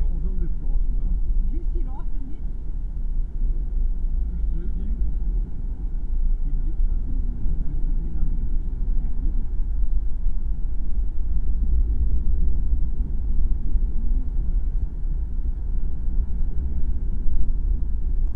Middelkerke, Belgique - I can hear it monument
Every year on the coast, there's an artistic festival called Beaufort. During this time in Westende, an artist made two gigantic metallic megaphones. Anyway you can see it on google aerial view. This work of art is called "I can hear it". I recorded the sea inside. The low-pitched sounds are extraordinarily amplified.
16 November 2018, ~15:00